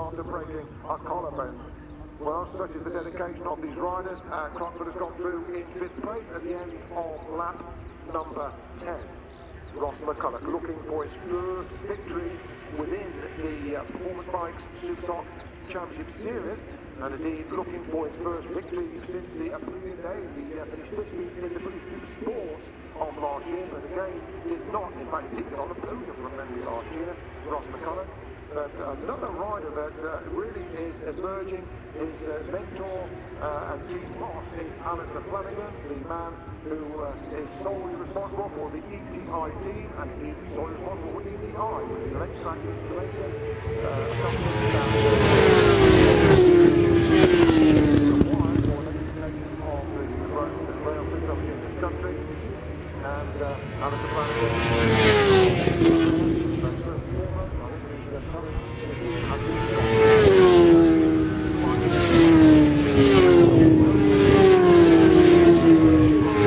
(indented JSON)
{
  "title": "Silverstone Circuit, Towcester, United Kingdom - world endurance championship 2002 ... superstock ...",
  "date": "2002-05-19 12:10:00",
  "description": "fim world enduance championship 2002 ... superstock support race ... one point stereo mic to minidisk ...",
  "latitude": "52.07",
  "longitude": "-1.02",
  "altitude": "152",
  "timezone": "Europe/London"
}